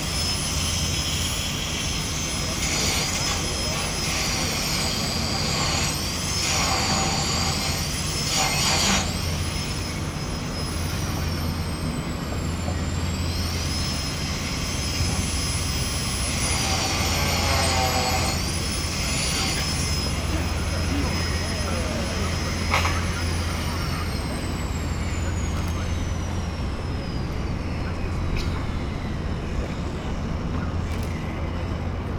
Berlin, Marx-Engels-Forum - Marx and Engels leaving
workers lifting Friedrich Engels from his place at Marx-Engels-Forum. because of the planned new subway U5, Marx and Engels have to move about 150m to a temporary home. at this place, they will look straight west instead of east as before. many journalists are present.
Berlin, Deutschland, 7 September 2010, ~13:00